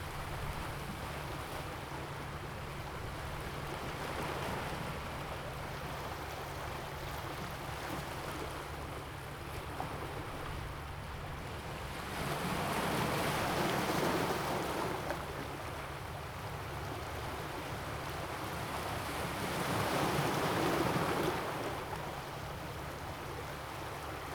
Xikou, Tamsui Dist., 新北市 - On the coast
On the coast, Sound of the waves
Zoom H2n MS+XY
New Taipei City, Tamsui District